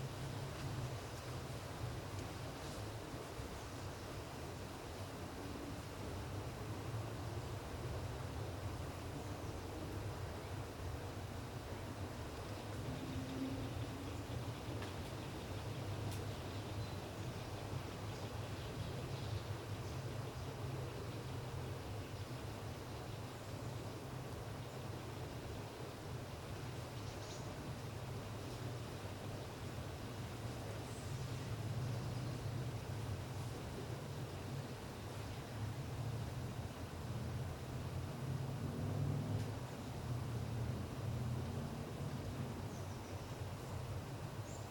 Recorded with Zoom H5. Placed just off a walking path.
There is a bird singing a few songs and some other animal making some 'wood knocking' type of sound.
Distant traffic, train and power plant sounds.